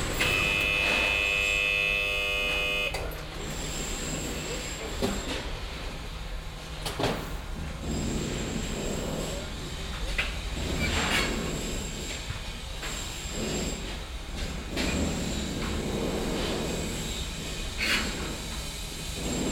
refrath, in der taufe, mietshausrenovierung und tiefgaragentor
arbeiten an mietshausfassade, rufe der arbeiter, fahrten des aussenaufzug, das öffnen des tiefgaragengitters, herausfahren eines pkw, schliessen des tores, hämmern und bohren
soundmap nrw - social ambiences - sound in public spaces - in & outdoor nearfield recordings